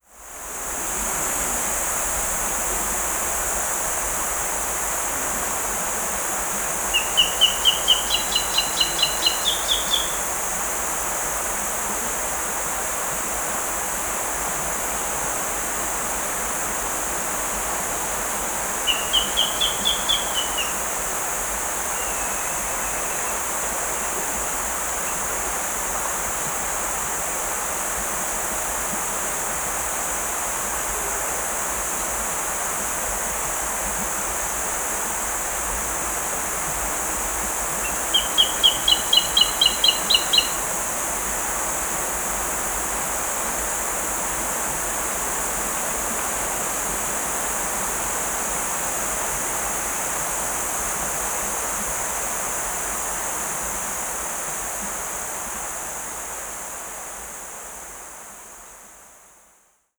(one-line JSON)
{"title": "Кызылский, Республика Тыва, Россия - Eerbek valley.", "date": "2012-08-01 11:20:00", "description": "River, cicadas, birds.\nTech.: Senn. MKH-416, Marantz PMD-661.", "latitude": "51.77", "longitude": "94.15", "altitude": "810", "timezone": "Asia/Krasnoyarsk"}